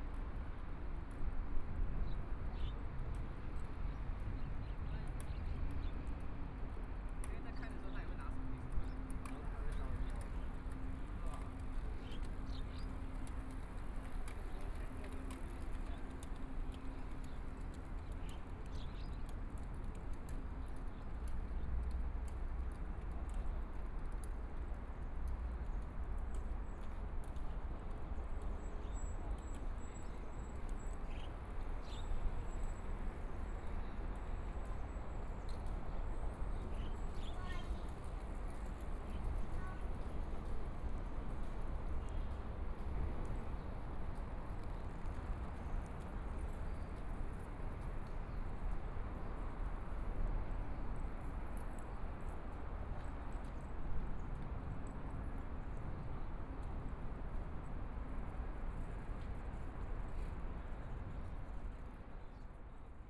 Walking along the river, Pedestrian, Traffic Sound, A lot of people riding bicycles through
Binaural recordings, ( Proposal to turn up the volume )
Zoom H4n+ Soundman OKM II
Zhongshan District, Taipei City, Taiwan, 16 February 2014